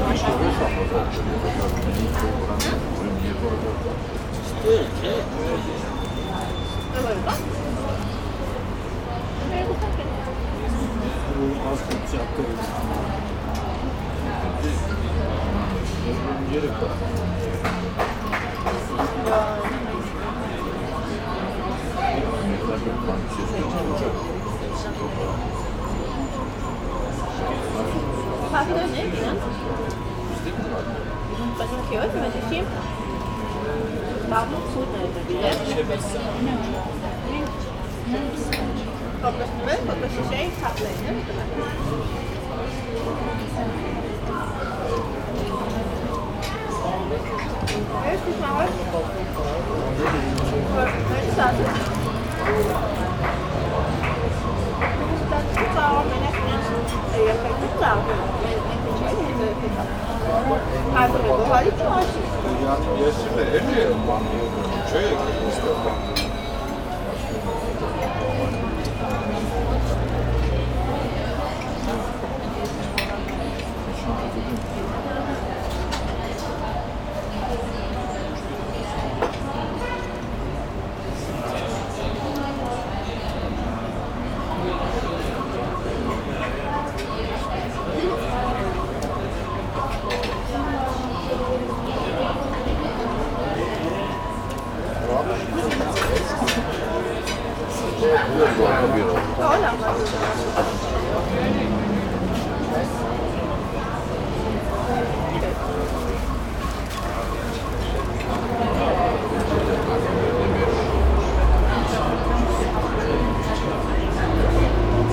{
  "title": "Yerevan, Arménie - Night ambiance",
  "date": "2018-09-07 20:00:00",
  "description": "Along the Zatar pizza restaurant, evening ambiance with clients quiet discussions and loud traffic on the nearby Tigran Mets avenue.",
  "latitude": "40.18",
  "longitude": "44.51",
  "altitude": "987",
  "timezone": "Asia/Yerevan"
}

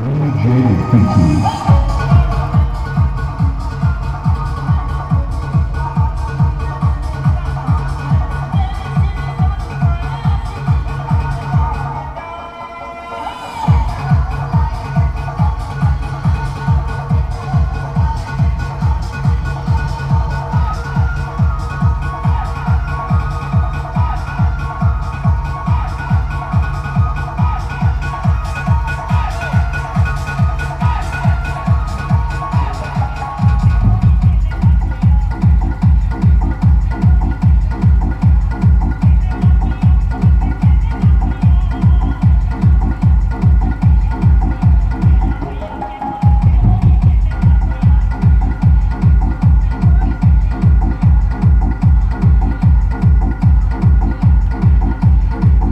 {
  "title": "Orachha, Madhya Pradesh, Inde - Durga party with DJ Firo",
  "date": "2015-10-22 21:00:00",
  "description": "An amazing mobile soundsystem circulates on the village's main road to celebrate Durga. It is followed by young men and ... horses dancing.",
  "latitude": "25.35",
  "longitude": "78.64",
  "altitude": "216",
  "timezone": "Asia/Kolkata"
}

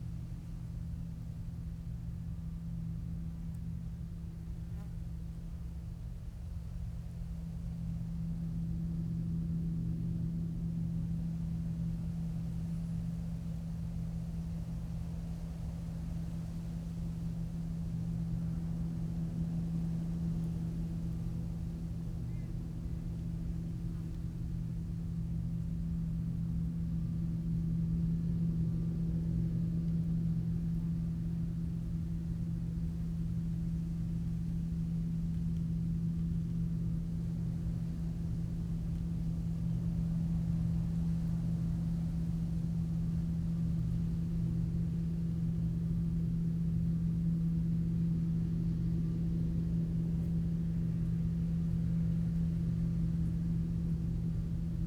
{
  "title": "Green Ln, Malton, UK - Combine harvesting ...",
  "date": "2017-08-21 11:50:00",
  "description": "Combine harvesting ... movement from tractors and trailers ... open lavalier mics clipped to sandwich box ...",
  "latitude": "54.13",
  "longitude": "-0.55",
  "altitude": "81",
  "timezone": "Europe/London"
}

